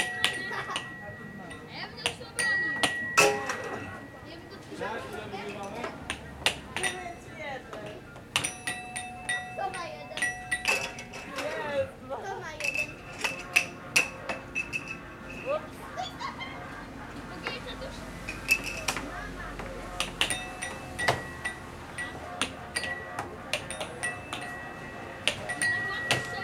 Łeba, Polen - Łeba - playing 'Speed-Hockey' on a rainy day
Łeba - playing 'Speed-Hockey' on a rainy day. [I used Olympus LS-11 for recording]